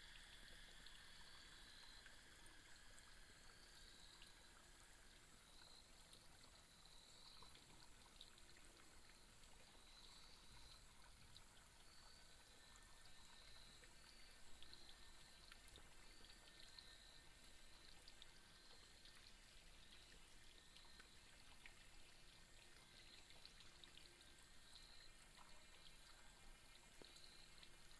成福道路, Fuxing Dist., Taoyuan City - Cicada cry
Cicada cry, Traffic sound
Taoyuan City, Taiwan, 10 August 2017